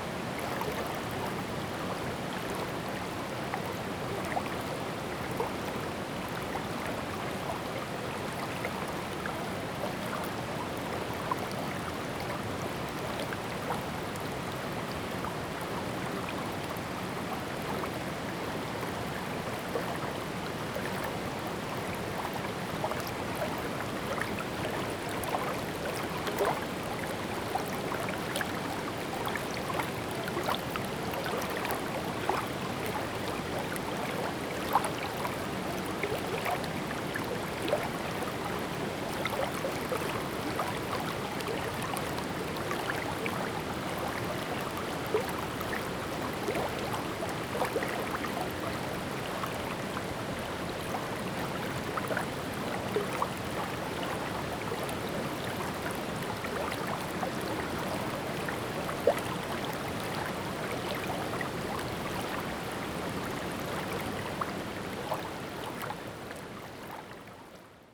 種瓜坑溪, 埔里鎮成功里, Taiwan - In the river stream
Brook, In the river, stream
Zoom H2n MS+XY